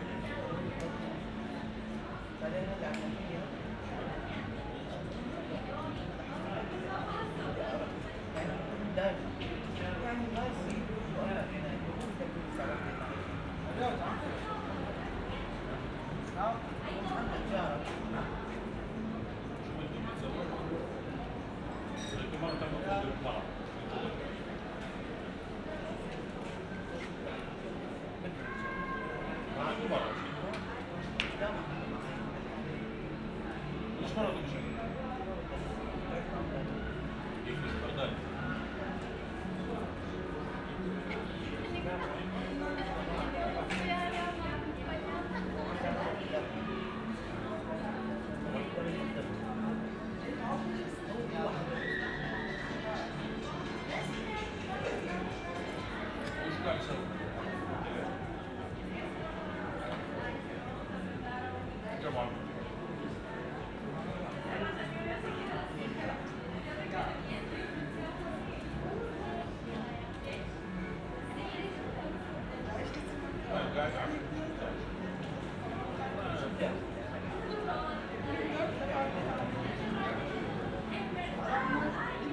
Berlin, Kotti, Bodegga di Gelato - the city, the country & me: in front of bottega del gelato
guests of the bottega ordering ice cream, passers-by
the city, the country & me: august 27, 2012